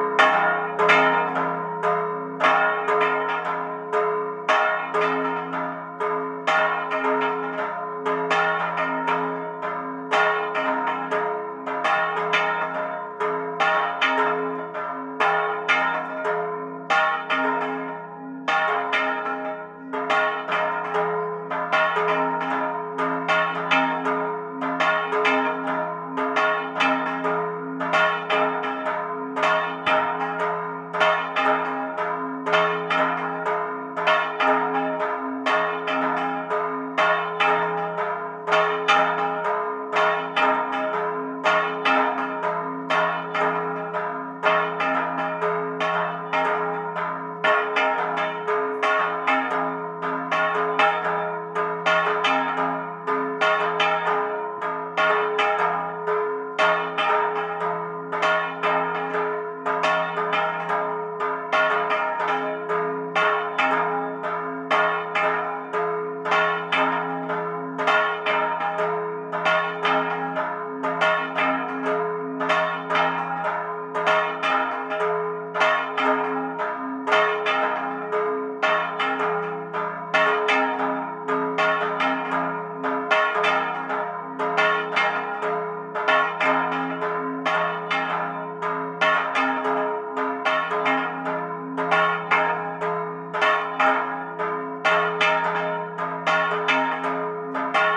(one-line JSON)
{"title": "Calle 2 Nte., Centro, Cholula, Pue., Mexique - Cholula - Sanctuaire de la Vierge", "date": "2021-11-12 13:30:00", "description": "Cholula\nSantuario de la Virgen de los remedios\ncloches en volée manuelle.", "latitude": "19.06", "longitude": "-98.30", "altitude": "2153", "timezone": "America/Mexico_City"}